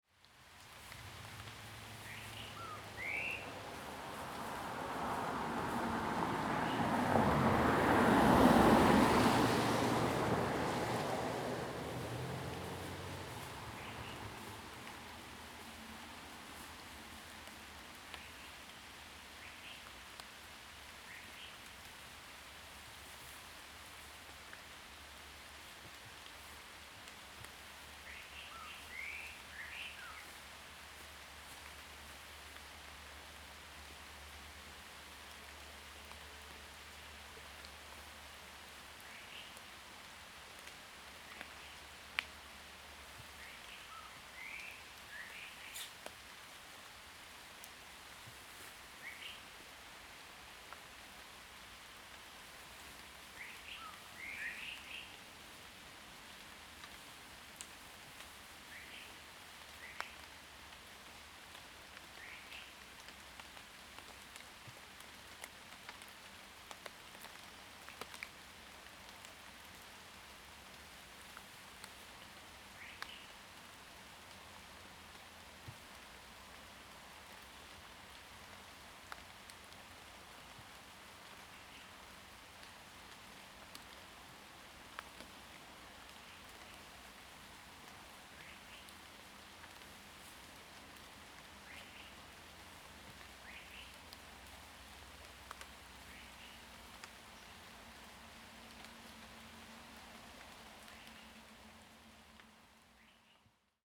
Morning in the mountains, Bird sounds, Traffic Sound, raindrop
Zoom H2n MS+XY
Puli Township, 水上巷